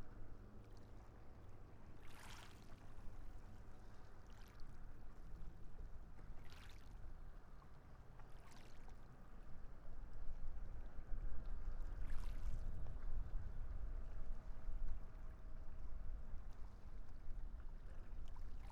rijeka, kantrida, beach, sea, waves, music
Rijeka, Croatia